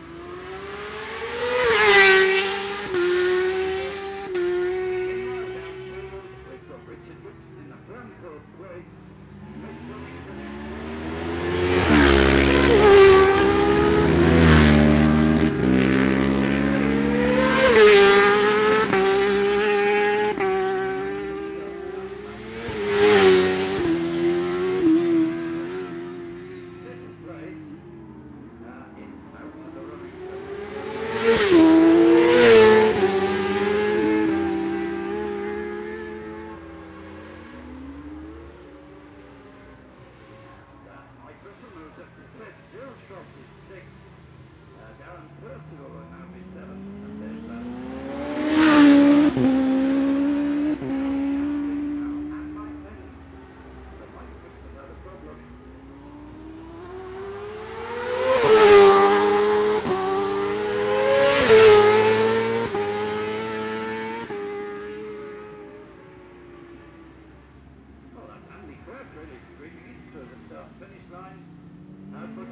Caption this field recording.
Barry Sheene Classic Races 2009 ... one point stereo mic to minidisk ... 600 bikes ... in line fours and twins ...